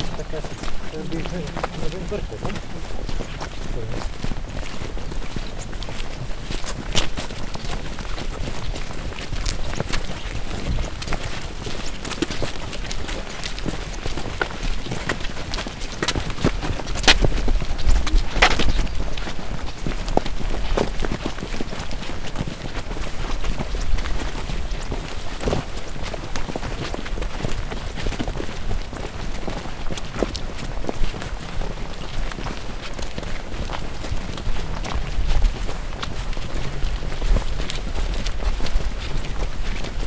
Warsztaty Ucho w Wodzie z grupą Warsztatu Terapii Zajęciowej.
Gdańsk, Poland